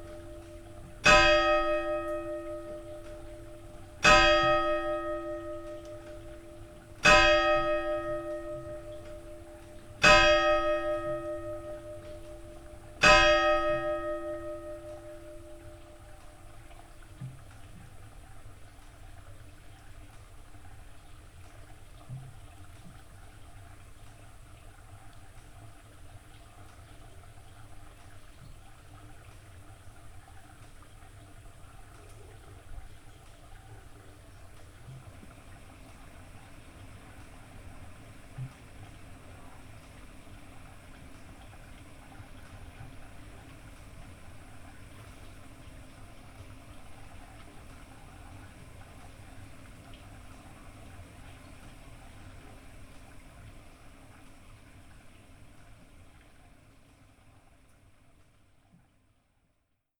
Bredereiche, Fürstenberg/Havel, Deutschland - church bells, night ambience
at the small half-timber church, built late 17th century, village of Bredereiche, church bells at 11
(Sony PCM D50, Primo EM172))
Fürstenberg/Havel, Germany, 1 July 2016